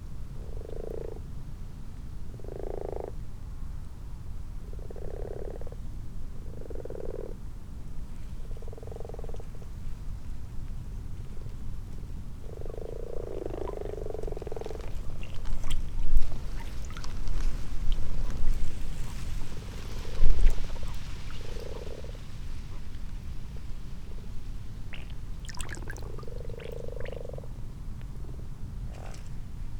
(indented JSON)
{
  "title": "Malton, UK - frogs and toads ...",
  "date": "2022-03-12 23:16:00",
  "description": "common frogs and common toads in a pond ... xlr sass on tripod to zoom h5 ... time edited unattended extended recording ...",
  "latitude": "54.12",
  "longitude": "-0.54",
  "altitude": "77",
  "timezone": "Europe/London"
}